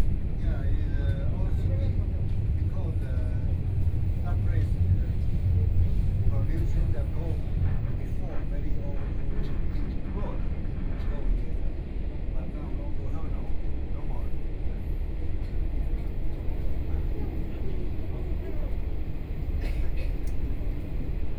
Xinyi District, Taipei - Chu-Kuang Express
from Taipei Station to Songshan Station, Train broadcast messages, Binaural recordings, Zoom H4n+ Soundman OKM II